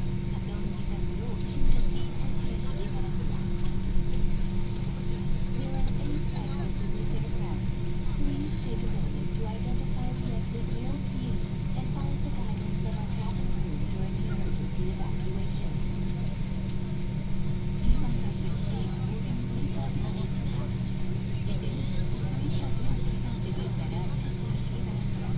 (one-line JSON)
{"title": "Gimhae International Airport, Busan - In the cabin", "date": "2014-12-22 10:56:00", "description": "In the cabin, Takeoff", "latitude": "35.17", "longitude": "128.95", "altitude": "4", "timezone": "Asia/Seoul"}